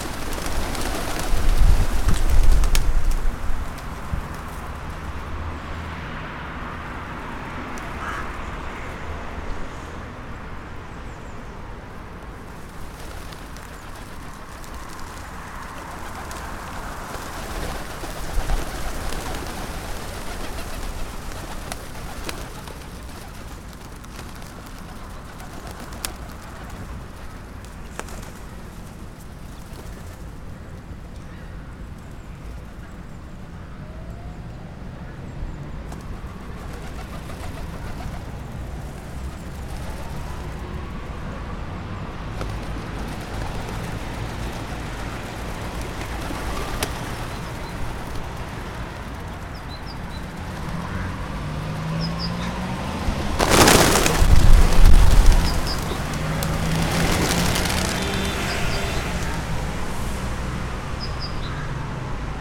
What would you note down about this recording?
Feeding the pigeons with the city traffic sounds in the background.